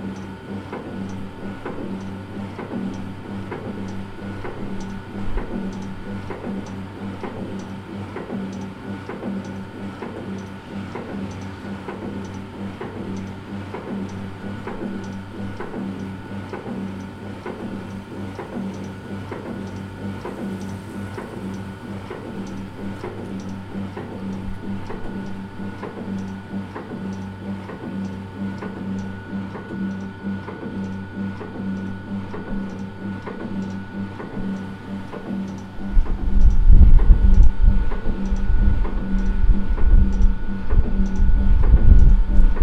Strekkerweg, Amsterdam, Nederland - Wasted Sound Construction site
Noord-Holland, Nederland